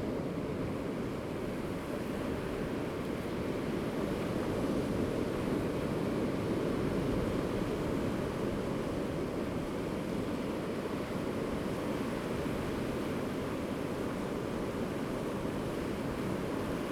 興昌村, Donghe Township - At the seaside
At the seaside, Sound of the waves, Very hot weather
Zoom H2n MS+ XY